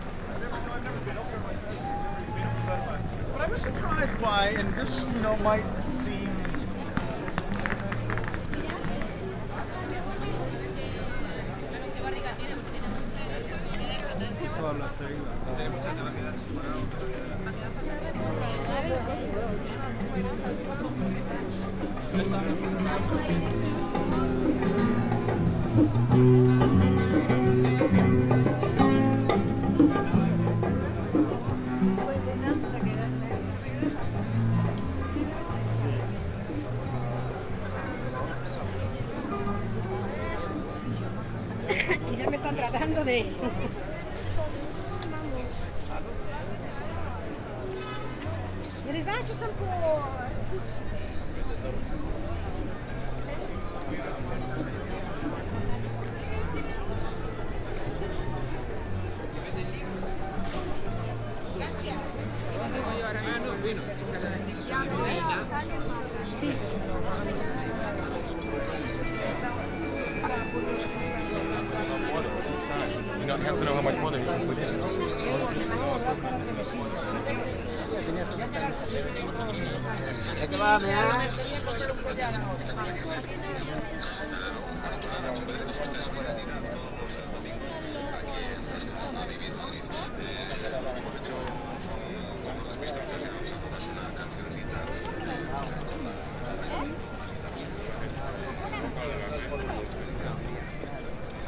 Soundwalk, Rastro, Madrid, 20100425
A brief soundwalk through Plaza Cabestreros, the epicentre of Madrids Rastro market, on a Sunday.
España, European Union